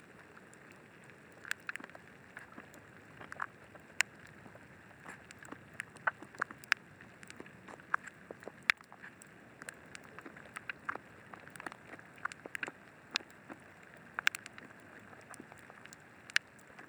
Puluhika, Lakepa, Niue - Puluhika Hydrophone